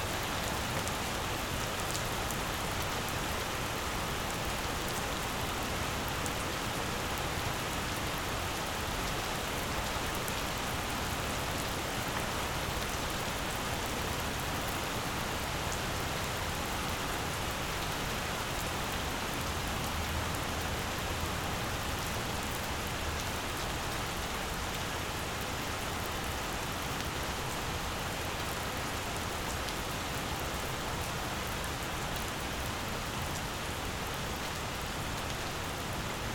Ave, Ridgewood, NY, USA - Heavy Rain in Ridgewood
Heavyrain in Ridgewood, Queens.